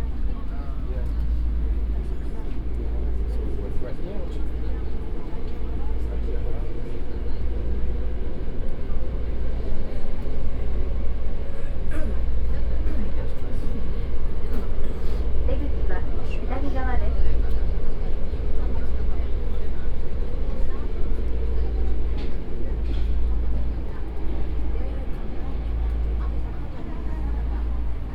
tokyo, inside subway
inside a tokyo subway train
international city scapes - social ambiences and topographic field recordings